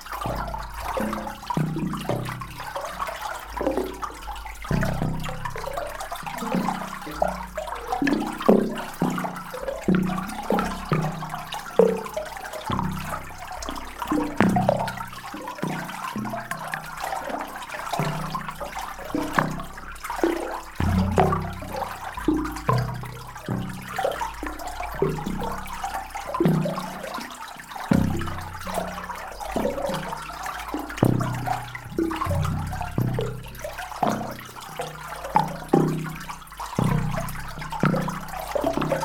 In an underground mine, water flowing into a pipe is making a delicious music. This is working only during winter times.